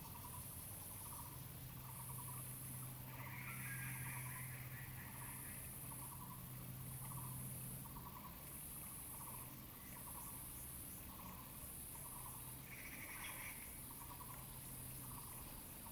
Several kinds of birds sounded, Zoom H2n MS+XY
Sanxia District, New Taipei City, Taiwan, August 2017